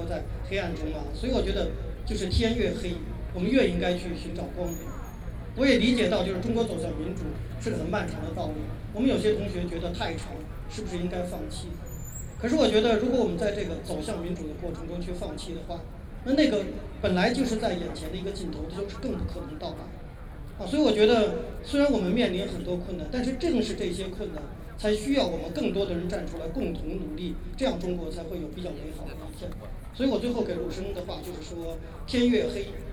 National Chiang Kai-shek Memorial Hall, Taipei - Speech
Wang Dan, a leader of the Chinese democracy movement, was one of the most visible of the student leaders in the Tiananmen Square protests of 1989., Sony PCM D50 + Soundman OKM II
4 June 2013, ~20:00